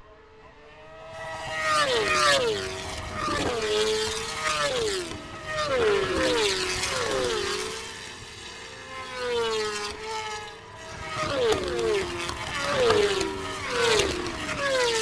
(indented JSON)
{
  "title": "Silverstone, UK - british motorcycle grand prix 2016 ... moto two ...",
  "date": "2016-09-02 15:05:00",
  "description": "moto two free practice two ... Maggotts ... Silverstone ... open lavalier mics on T bar strapped to sandwich box on collapsible chair ... windy grey afternoon ... rain stopped play ...",
  "latitude": "52.07",
  "longitude": "-1.01",
  "timezone": "Europe/London"
}